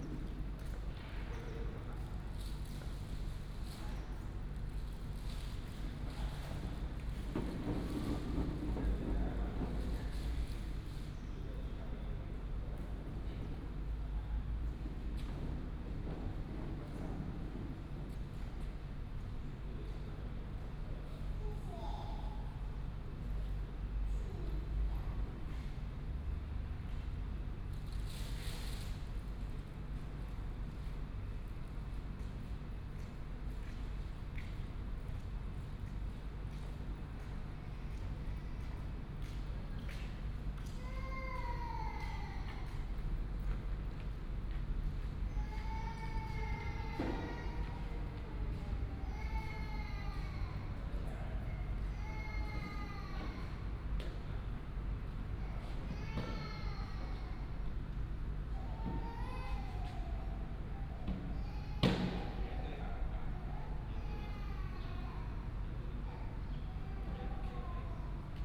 中興文創園區, Wujie Township, Yilan County - Child and skateboard
Transformation of the old paper mill, Child, skateboard, Traffic sound, Binaural recordings, Sony PCM D100+ Soundman OKM II